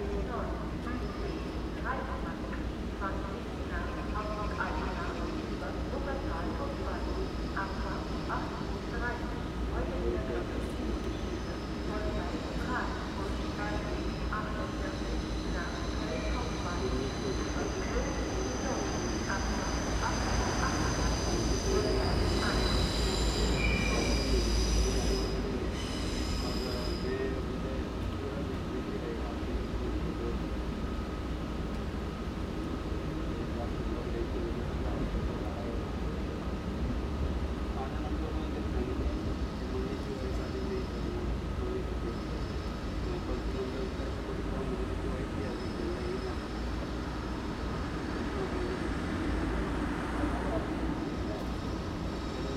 Solingen, Deutschland - Rund um den Busbahnhof / Around the bus station
Geräusche rund um den Busbahnhof in Ohligs: Stimmen, Busse, PKW ein Zug, ein Presslufthammer an der Brücke 180 m südöstlich. / Noise around the bus station in Ohligsberg: voices, buses, cars, a train, a jackhammer on the bridge 180 meters to the southeast.